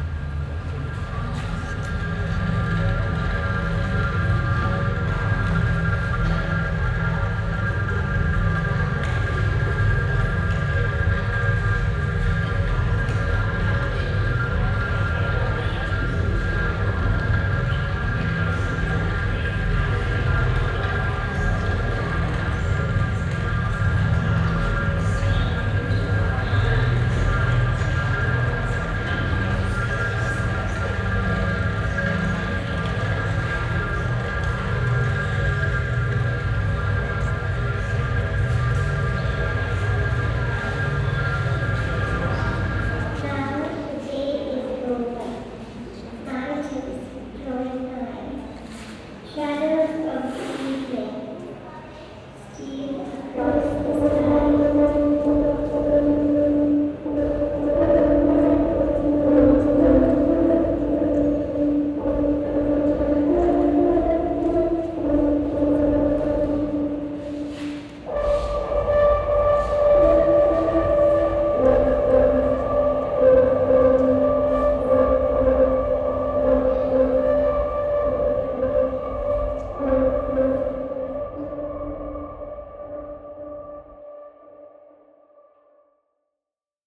Inside the documenta hall during the documenta 13.
The sound of a video installation by Nalini Malani.
soundmap d - social ambiences, art places and topographic field recordings
Kassel, Germany, 13 September, ~16:00